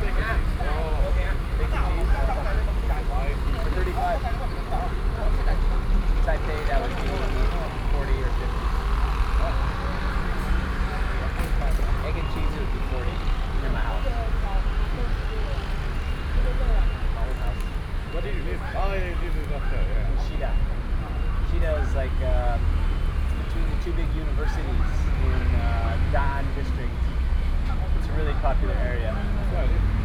Linsen Rd., Hsinchu City - Discourse
Meet, Sony PCM D50 + Soundman OKM II
Hsinchu City, Taiwan, 24 September, 16:48